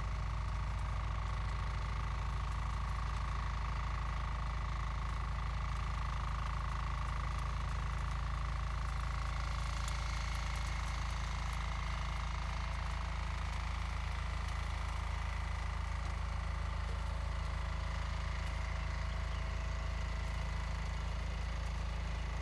白坑村, Huxi Township - Agricultural machines
Next to farmland, Small village, Agricultural machines
Zoom H2n MS+XY
21 October, ~16:00